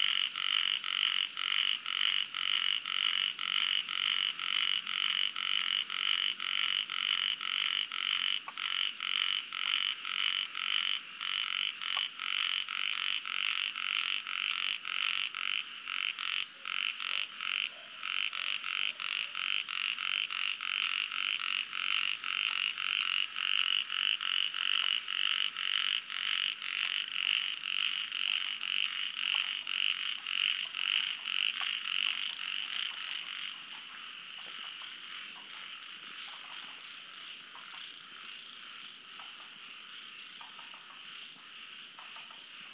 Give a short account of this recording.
Chorus of three species of frogs, Microhyla fissipes, Polypedates braueri and Lithobates catesbeiana, recorded in a countryside road near a Lichi fruit plantation, at the elevation of 100m.